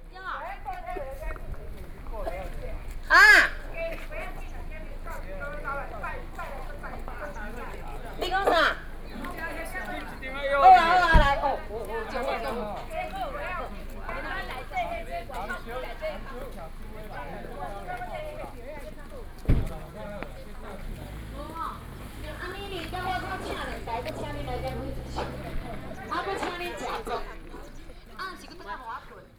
十分旅遊服務中心, New Taipei City - Dialogue of tourists and tour guides